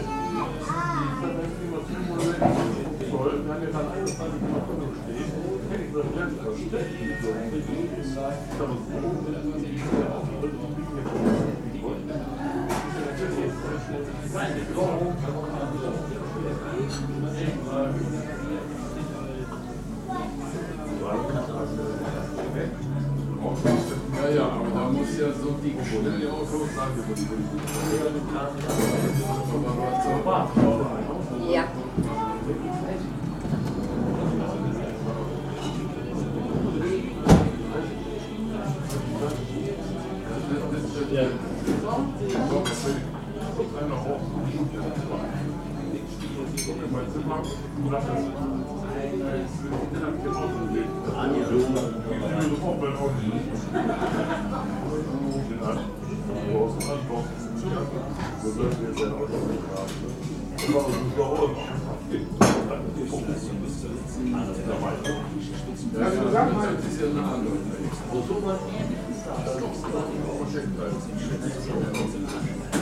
haus becker, möwenstr. 15, 42281 wuppertal
Wuppertal, Germany